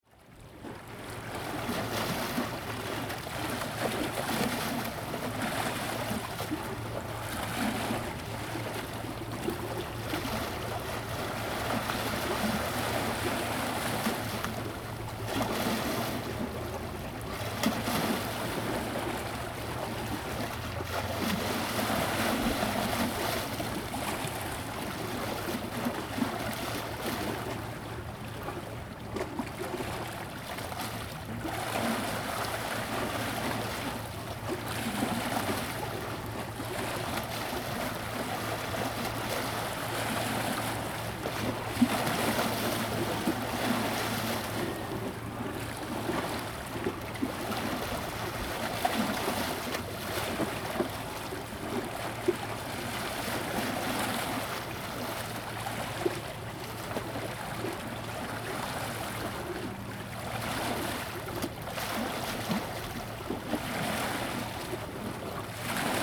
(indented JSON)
{
  "title": "淡水區文化里, New Taipei City - tide",
  "date": "2016-03-02 12:23:00",
  "description": "The river, tide\nZoom H2n MS+XY",
  "latitude": "25.17",
  "longitude": "121.43",
  "timezone": "Asia/Taipei"
}